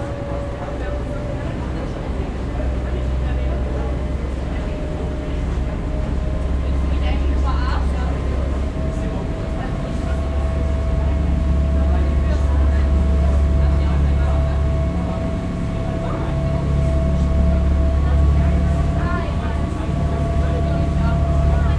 Welcom on board
Captation ZOOM H6